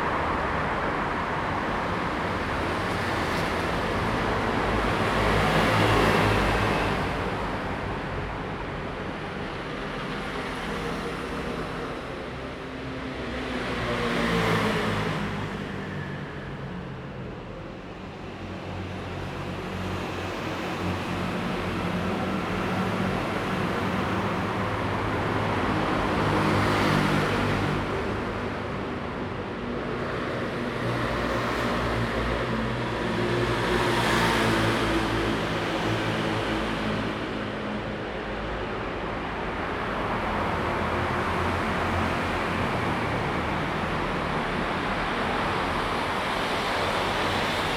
Xida Rd., East Dist., Hsinchu City - Underground lane
Traffic sound, Train traveling through, Underground lane
Zoom H2n MS+XY